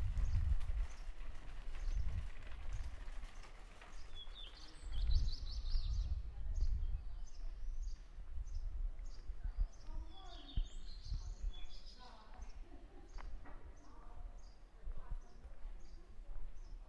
Piazza Antonio Gramsci, Serra De Conti AN, Italia - Ambience at lunch time
At lunch time, people talking in the square, birds, ambience.
Recorded with a Zoom H1n.
Serra De Conti AN, Italy, 26 May 2018